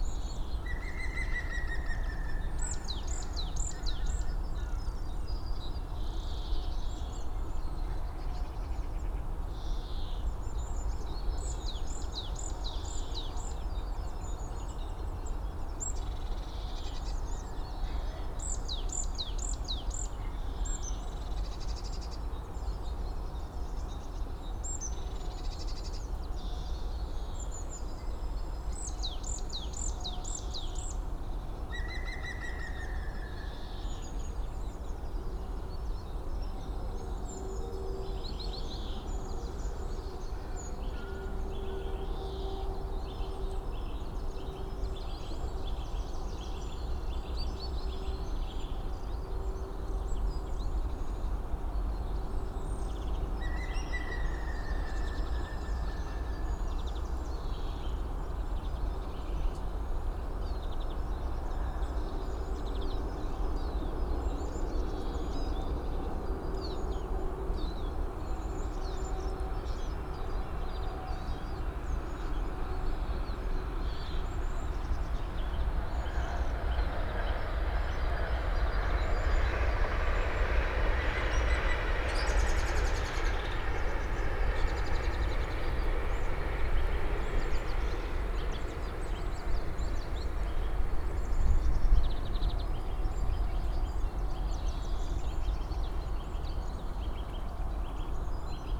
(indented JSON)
{
  "title": "Panketal, Berlin, Deutschland - suburb nature ambience",
  "date": "2019-02-14 08:25:00",
  "description": "between Berlin Buch and Panketal, suburb morning ambience, at river Panke. Drone of distant traffic, trains, call of a green woodpecker (Picus viridis), some tits (Kohlmeise), green finchs (Grünfink) and others\n(Sony PCM D50, DPA4060)",
  "latitude": "52.64",
  "longitude": "13.51",
  "altitude": "58",
  "timezone": "Europe/Berlin"
}